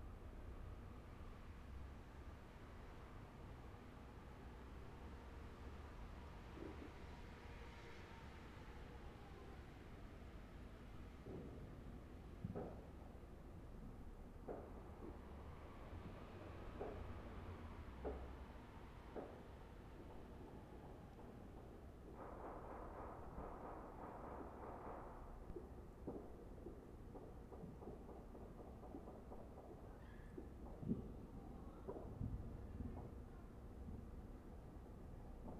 South Deering, Chicago, IL, USA - Guns of New Year 2014
Recording gun shots from neighbors to bring in the new year.